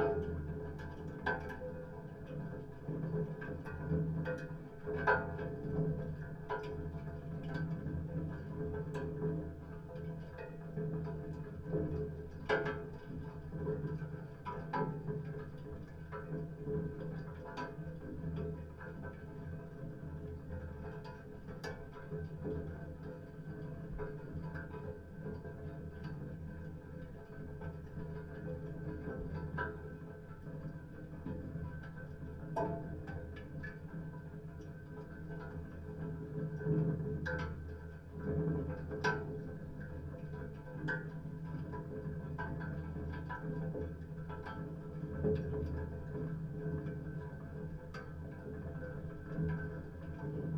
Netzow, Templin, Deutschland - iron furnace at work (contact)
(Sony PCM D50, DIY stereo contact mics)
Templin, Germany, December 2016